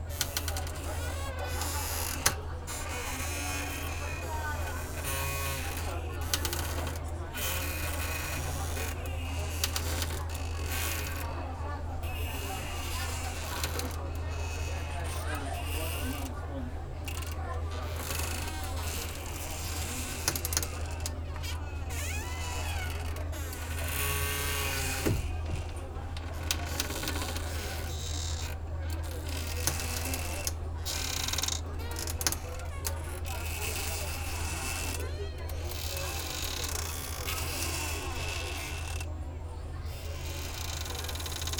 sea room, Novigrad, Croatia - moody tales
built in closet, open windows
13 July